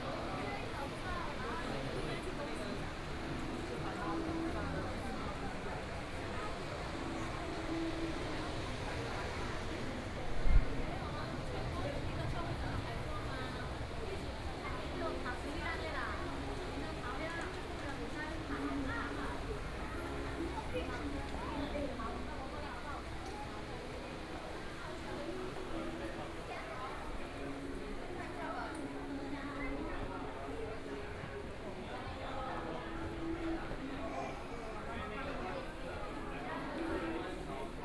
walking in the Underground shopping street, Through a variety of different shops
Please turn up the volume a little
Binaural recordings, Sony PCM D100 + Soundman OKM II
Taipei City, Taiwan, 28 February 2014